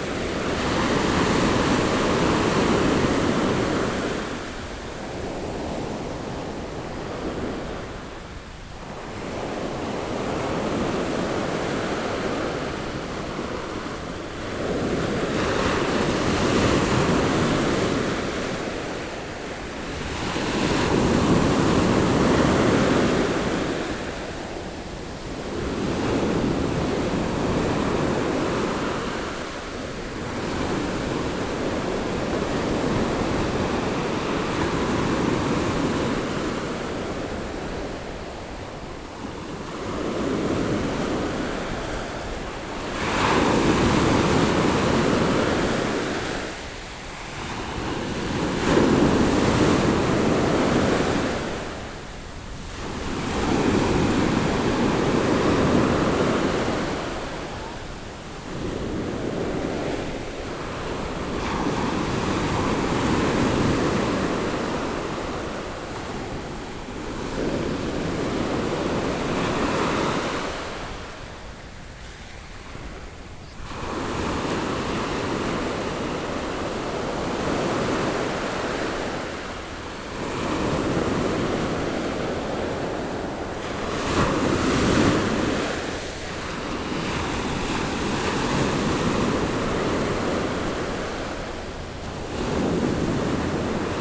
Sound of the waves, The weather is very hot
Zoom H6 MS+Rode NT4
Hualien County, Sincheng Township, 花8鄉道, 27 August